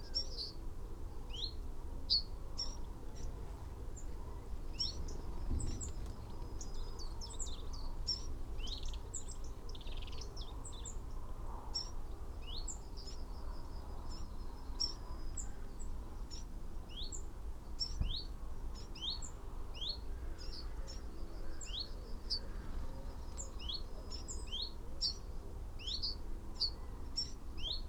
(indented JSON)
{
  "title": "Malton, UK - chiffchaff nest ...",
  "date": "2022-07-18 06:52:00",
  "description": "chiffchaff nest ... xlr sass on tripod to zoom h5 ... male song ... call in tree almost above nest ... female calling as visits nest ... fledgling calling from nest ... 12:40 fledgling(s) leave nest ... song calls from ... dunnock ... yellowhammer ... wren ... blackbird ... pied wagtail ... pheasant ... whitethroat ... crow ... blue tit ... background noise ...",
  "latitude": "54.12",
  "longitude": "-0.54",
  "altitude": "83",
  "timezone": "Europe/London"
}